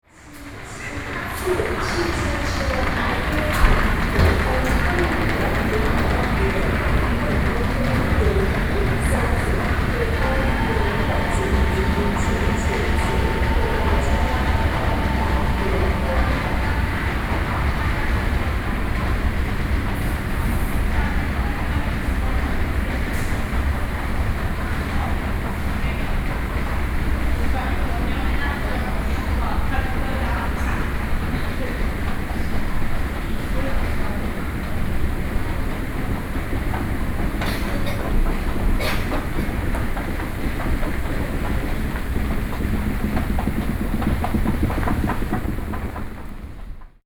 {"title": "Wanhua Station, Taipei City - The old escalator", "date": "2012-10-31 19:58:00", "latitude": "25.03", "longitude": "121.50", "altitude": "6", "timezone": "Asia/Taipei"}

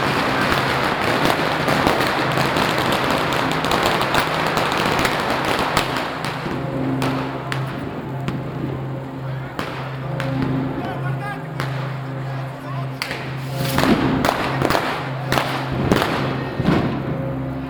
{"title": "Stephansplatz, Vienna - New Year 2009 (schuettelgrat)", "date": "2008-12-31 23:56:00", "description": "New Years Celebrations at Viennas Stephansplatz, Binaural Recording, Fireworks, People and the bell of St. Stephens Cathedral", "latitude": "48.21", "longitude": "16.37", "altitude": "185", "timezone": "Europe/Vienna"}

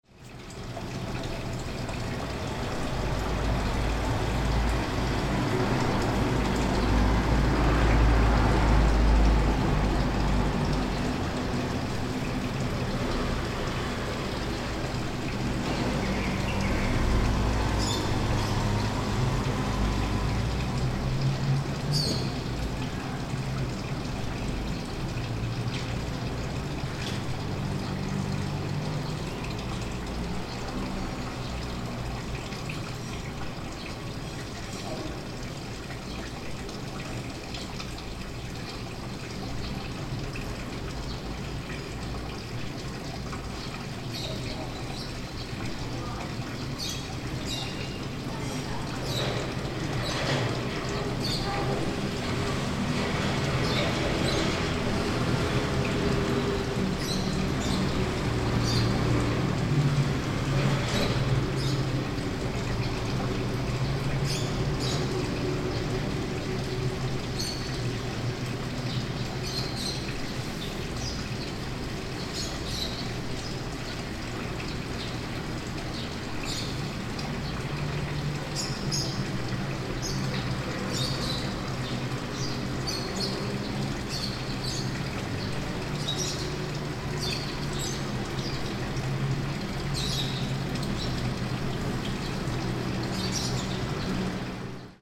{"title": "Taiwan, Taichung City, Dali District, 長榮里 - Traffic, birds, water", "date": "2008-12-09 12:41:00", "description": "Traffic, birds, water. Recorded off a 3rd floor balcony. The balcony overlooks a parking lot that used to be a rice field. The sound of water is from the reservoir tower and pool that was used to water the rice field.", "latitude": "24.11", "longitude": "120.69", "altitude": "60", "timezone": "Asia/Taipei"}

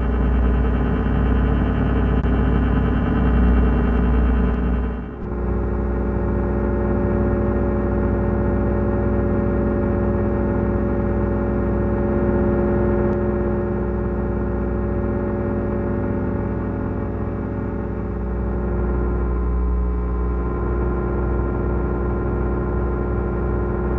{"title": "Montreal: Pharmaprix (Electric Walk) - Pharmaprix (Electric Walk)", "date": "2008-09-18 18:45:00", "description": "equipment used: AIWA Digital MD recorder, EM field sensor headphones designed by Christina Kubisch\nThe headphones used convert EM waves into audible tones.", "latitude": "45.52", "longitude": "-73.56", "altitude": "24", "timezone": "America/Montreal"}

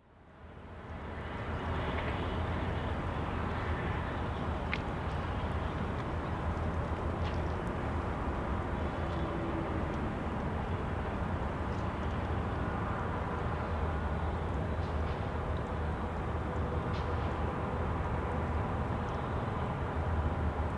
{"title": "Urban noise across the river, Calgary", "date": "2010-04-21 21:15:00", "description": "recorded during a 10 minute listening exercise to analyze the soundscape", "latitude": "51.05", "longitude": "-114.09", "altitude": "1044", "timezone": "Europe/Tallinn"}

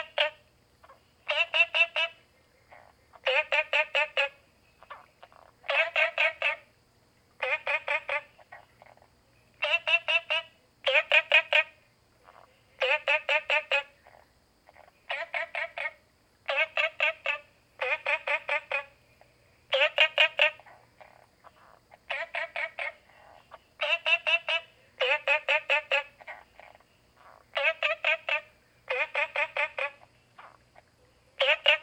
{"title": "Green House Hostel, Puli Township - Frogs chirping", "date": "2015-09-16 19:11:00", "description": "Frogs chirping, at the Hostel\nZoom H2n MS+XY", "latitude": "23.94", "longitude": "120.92", "altitude": "495", "timezone": "Asia/Taipei"}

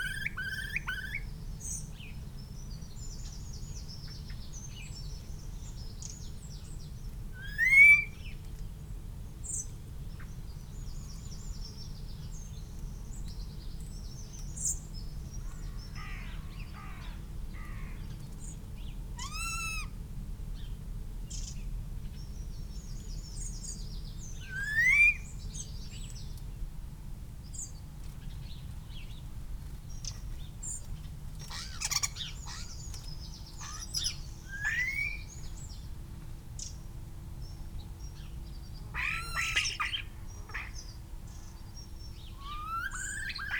{"title": "Chapel Fields, Helperthorpe, Malton, UK - Starling ...", "date": "2018-10-20 07:17:00", "description": "Starling ... song ... calls ... mimicry ... creaking ... sqeaking ... etc ... lavalier mics clipped to sandwich box ...", "latitude": "54.12", "longitude": "-0.54", "altitude": "77", "timezone": "Europe/London"}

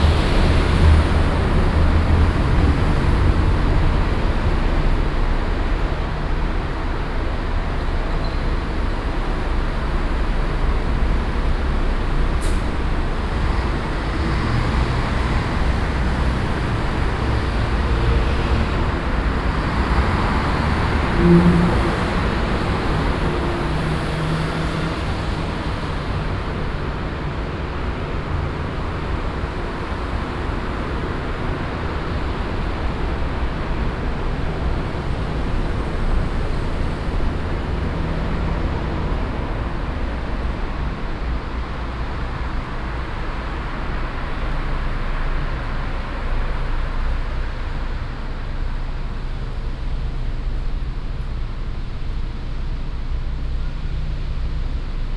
{"title": "essen, rathaus galerie, traffic", "date": "2011-06-08 22:26:00", "description": "Traffic recorded under the gallery bridge construction in between the two lanes.\nProjekt - Klangpromenade Essen - topographic field recordings and social ambiences", "latitude": "51.46", "longitude": "7.02", "altitude": "75", "timezone": "Europe/Berlin"}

